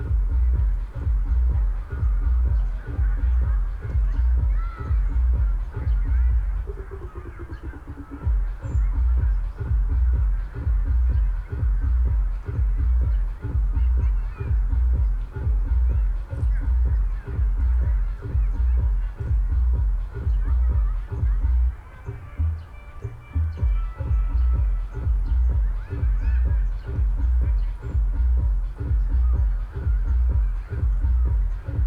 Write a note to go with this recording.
place revisited, it's disappointing, a sound system somewhere nearby is occupying the place, along with the hum of hundreds of people BBQing... (Sony PCM D50, DPA4060)